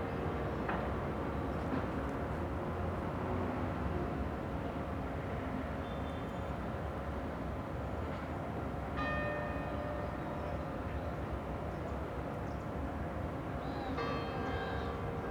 {
  "title": "Villa Arson, Nice, France - Bells and Birds near Villa Arson",
  "date": "2015-03-13 09:49:00",
  "description": "Besides the birdlife and traffic around the Villa Arson a bell is slowly tolling from the church of St Batholome.",
  "latitude": "43.72",
  "longitude": "7.25",
  "altitude": "71",
  "timezone": "Europe/Paris"
}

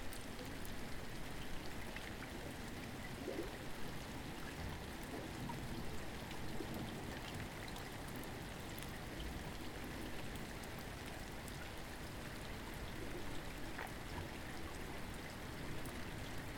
{
  "title": "Quayside, Ouseburn, Newcastle upon Tyne, United Kingdom - Quayside, Ouseburn",
  "date": "2019-10-13 15:43:00",
  "description": "Walking Festival of Sound\n13 October 2019\nTandem cyclist and bobbing boats in the rain.",
  "latitude": "54.97",
  "longitude": "-1.59",
  "altitude": "2",
  "timezone": "Europe/London"
}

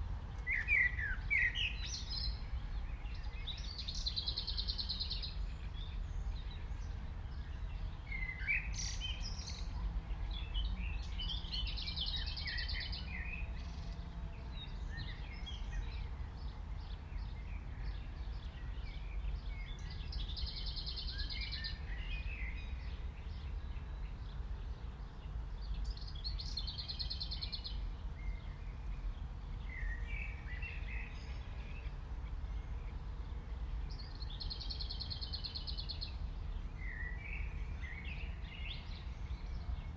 {
  "title": "The nightingale's perspective, The Wet Triangle, Brehmestraße, Berlin, Germany - The nightingale's perspective",
  "date": "2022-06-02 08:27:00",
  "description": "Recorded from an audio stream left overnight at this location. The mics were hidden in a thick bramble bush. The recording starts as a nice morning atmosphere with distance bell. A lesser whitethroat and blackbird are singing. The nightingale is sings very close by (would not happen if the recordist was personally present). It seems as if we are listening from his perspective. Around 7min30 dogs and (human) dog walkers pass by chatting. Again I get the rather odd impression that I'm hearing what the nightingale is hearing. He does pause slightly, maybe just checking, before singing again.",
  "latitude": "52.56",
  "longitude": "13.40",
  "altitude": "42",
  "timezone": "Europe/Berlin"
}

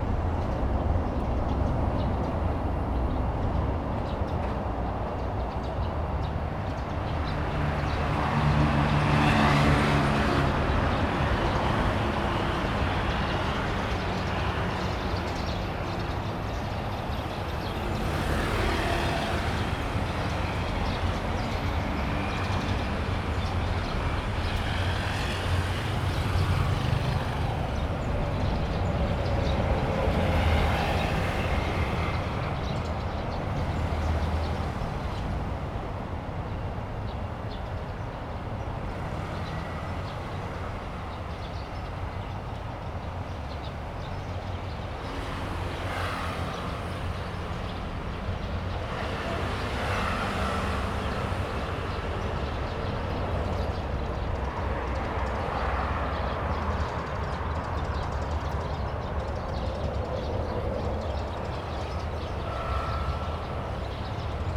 {
  "title": "Zhuanyao Rd., Changhua City - next to the high-speed road",
  "date": "2017-02-15 12:54:00",
  "description": "next to the high-speed road, Traffic sound, The sound of birds\nZoom H2n MS+XY",
  "latitude": "24.08",
  "longitude": "120.52",
  "altitude": "19",
  "timezone": "GMT+1"
}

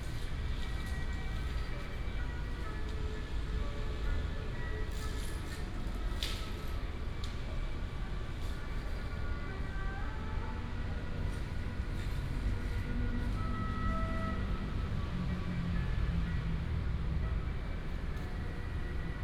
{
  "title": "新竹市立圖書館, Hsinchu City - small square",
  "date": "2017-10-30 08:38:00",
  "description": "A small square outside the library, birds, dog, A group of old people practicing tai chi in the square, Binaural recordings, Sony PCM D100+ Soundman OKM II",
  "latitude": "24.81",
  "longitude": "120.97",
  "altitude": "23",
  "timezone": "Asia/Taipei"
}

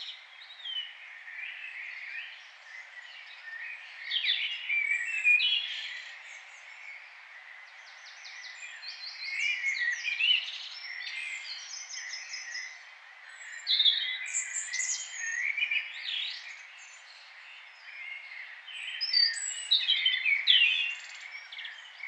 {
  "title": "St Barnabas Rd, Cambridge, UK - Dawn chorus circa June 1998",
  "date": "1998-06-01 04:30:00",
  "description": "Dawn chorus, garden of 9 St Barnabas Rd, circa June 1998. Recorded with Sony Pro Walkman and ECM-929LT stereo mic.",
  "latitude": "52.20",
  "longitude": "0.14",
  "altitude": "20",
  "timezone": "Europe/London"
}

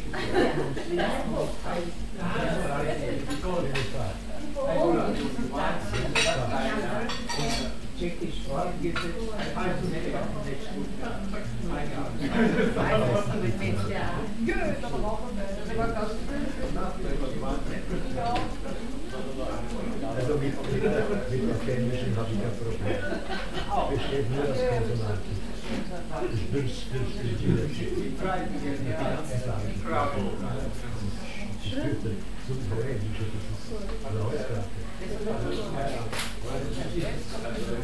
{
  "title": "vienna, schidgasse, beizn - wien, schildgasse, beizn",
  "date": "2008-05-20 23:59:00",
  "description": "cityscape vienna, recorded summer 2007, nearfield stereo recordings",
  "latitude": "48.21",
  "longitude": "16.35",
  "altitude": "199",
  "timezone": "Europe/Berlin"
}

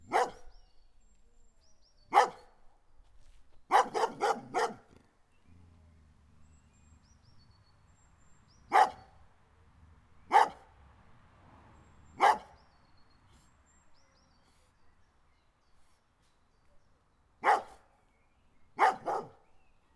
{
  "title": "Tuczno near Poznan, at sbs summer house gate, dog",
  "date": "2010-06-03 14:02:00",
  "description": "during a walk we went past this summer house with a barking dog",
  "latitude": "52.52",
  "longitude": "17.16",
  "altitude": "107",
  "timezone": "Europe/Warsaw"
}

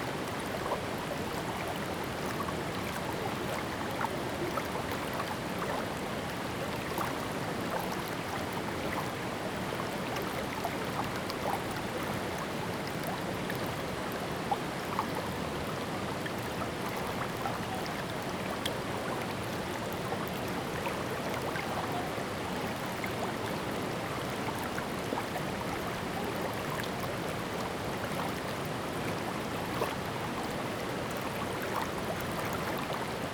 {
  "title": "種瓜坑溪, 埔里鎮成功里, Taiwan - In the river stream",
  "date": "2016-04-19 15:23:00",
  "description": "Brook, In the river, stream\nZoom H2n MS+XY",
  "latitude": "23.96",
  "longitude": "120.89",
  "altitude": "400",
  "timezone": "Asia/Taipei"
}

